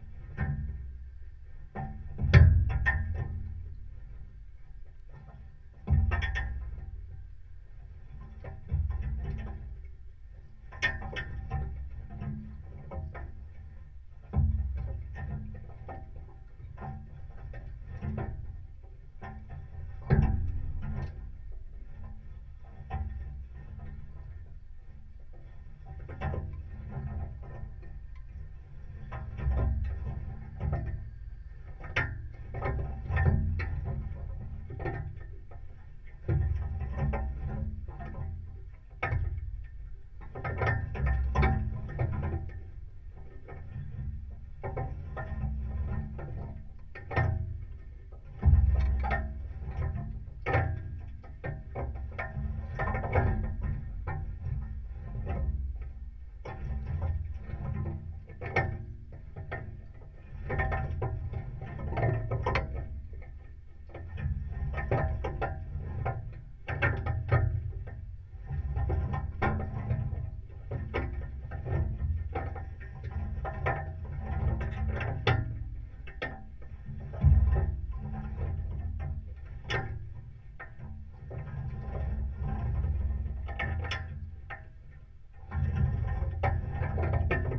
Juodkrante, Lithuania, rusty wire
Rusty barbed wire found at abandoned buildings. Contact microphones
20 July 2022, 14:05